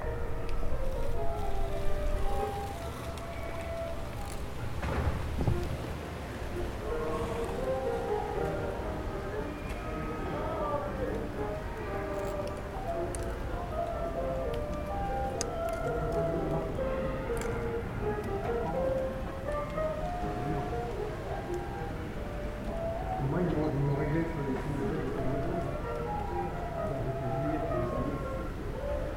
Rue Georges Marie Raymond, Chambéry, France - cité des arts
Chambéry, près de la cité des arts quelques fenêtres des salles de musique sont ouvertes, les répétitions des musiciens se mêlent aux bruits de la ville, les feuilles mortes emportées par le vent virevoltent avant de toucher le sol c'est l'automne il fait 23° j'ai pu faire le déplacement en vélo.
France métropolitaine, France, October 2022